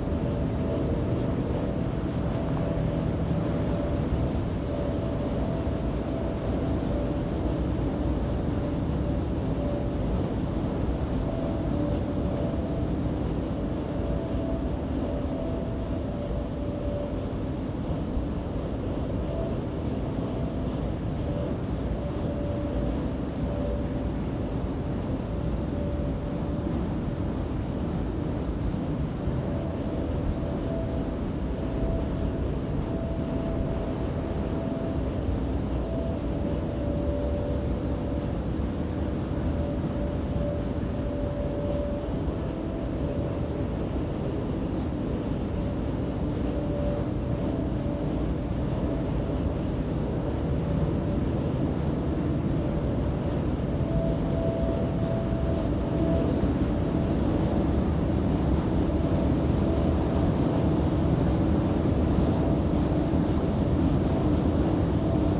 Carn Brea, Cornwall, UK - The Mast
Recorded on a windy day, beside a radio/telephone mast, the sound is the wind passing through it. I used a Tascam DR100.